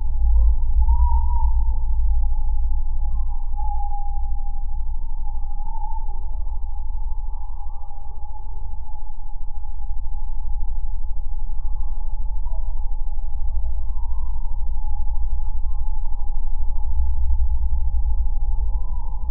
Dogs barking and other various sounds heard through a steel pillar of an installation 'The Sunken Boat' by Herbert Dreiseitl in Toppilansaari, Oulu. Recorded with LOM Geofón and Zoom H5. Gain adjusted and low-pass filter applied in post.